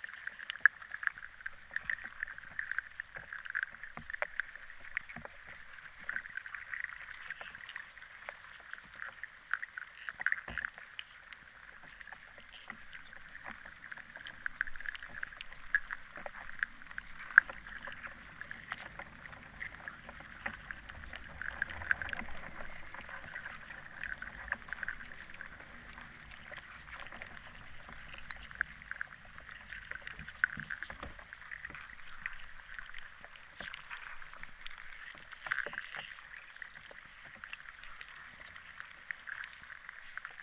Lithuania, Vyzuona river underwater
Hydrophone recording of "snake" river.